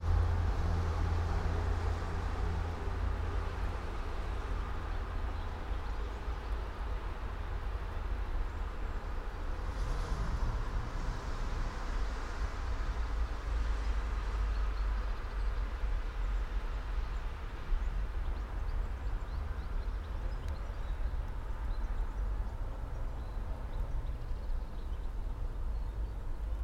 all the mornings of the ... - feb 20 2013 wed
Maribor, Slovenia, 20 February 2013, ~08:00